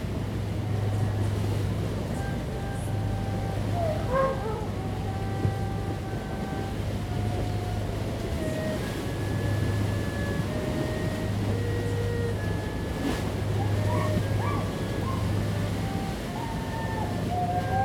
Tamsui Dist., New Taipei City - On the river bank
Tide, On the river bank, Erhu, Dogs barking
Zoom H2n MS+XY